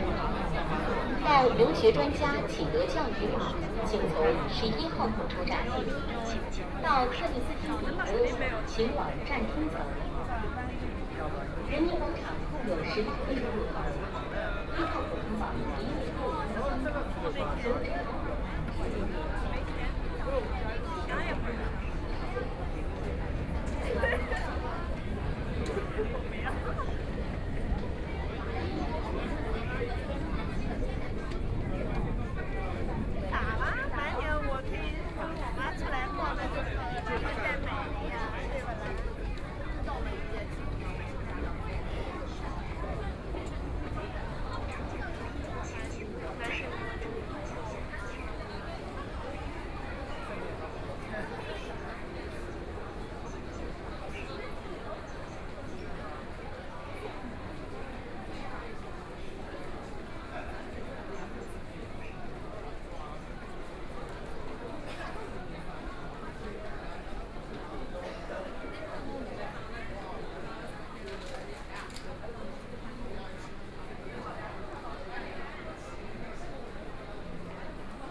From the station platform began to move toward the station exit, Binaural recording, Zoom H6+ Soundman OKM II
Huangpu, Shanghai, China, November 2013